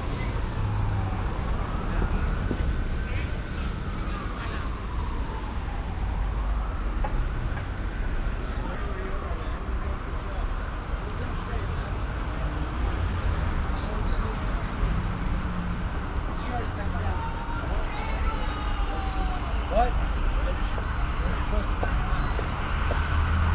vancouver, granville street, friday night
friday night in downtown, policeman arresting a drunk man, sirens, people passing, by a speeking car
soundmap international
social ambiences/ listen to the people - in & outdoor nearfield recordings
Vancouver, BC, Canada